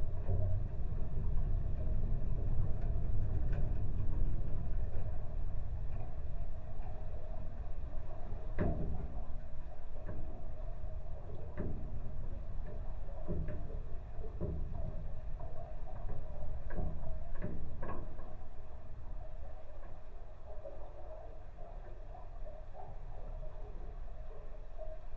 Utena, Lithuania, fence at the dam
contact microphones and geophone on the metallic fence at the flooded dam
Utenos apskritis, Lietuva